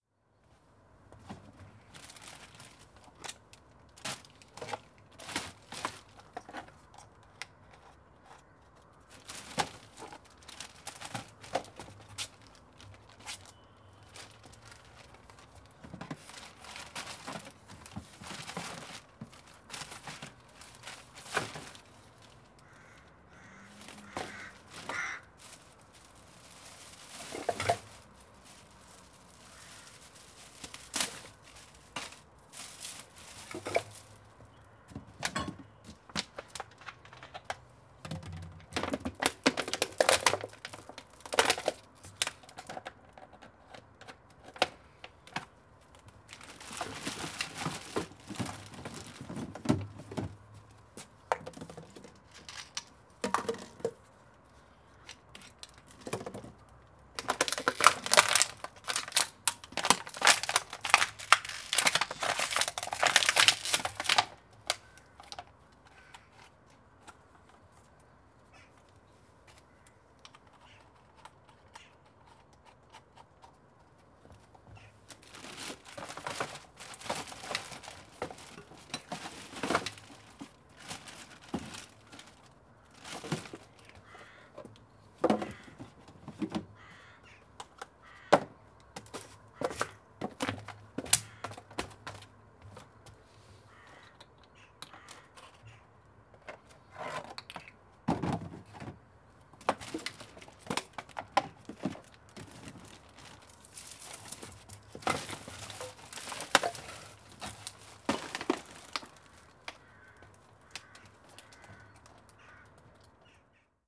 Poznan, balcony - sorting trash
sorting trash and flattening plastic bottles before taking out the garbage.
Poznan, Poland